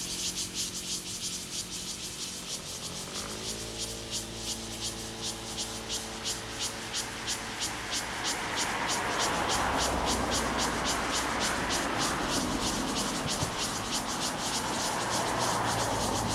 Jianxing Rd., Jhiben - Cicadas sound
Cicadas sound, Traffic Sound, Very hot weather
Zoom H2n MS + XY
Taitung City, Taitung County, Taiwan, 4 September 2014